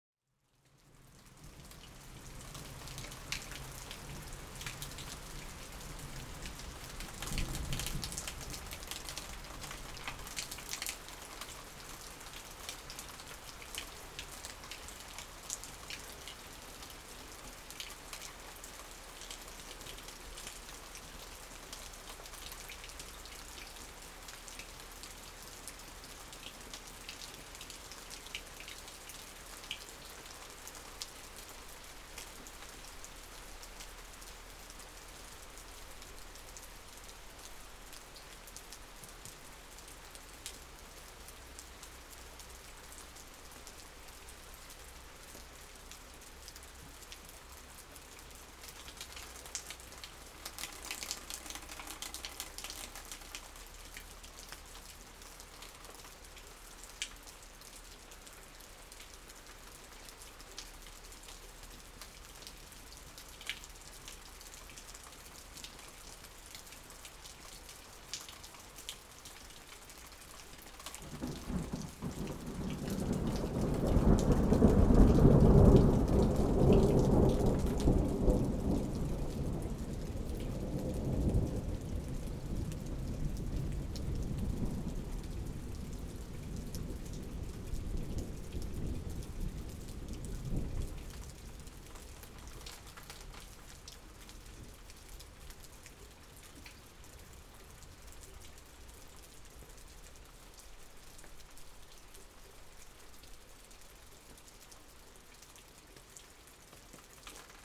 Chem. des Ronferons, Merville-Franceville-Plage, France - Summer Thunderstorm
Rain, Thunderstorm and animals, Zoom F3 and two mics Rode NT55.
20 July 2022, 13:47